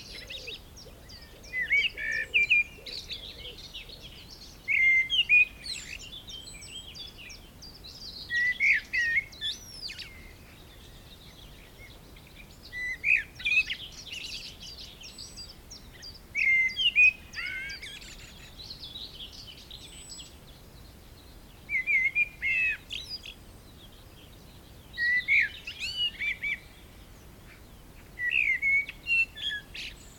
Recorded at the Castle Hill Nature reserve, just as the first UK Covid restrictions were being eased.
LOM MikroUSI, Sony PCM-A10
England, United Kingdom, 6 May 2020